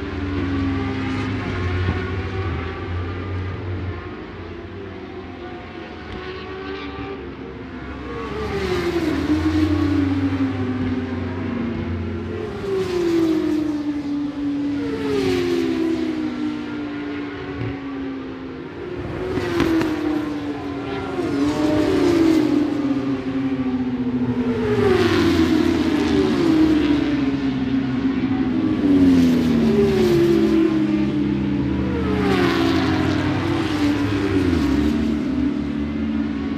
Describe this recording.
British Superbikes 2005 ... 600 free practice one (contd) ... one point stereo mic to minidisk ...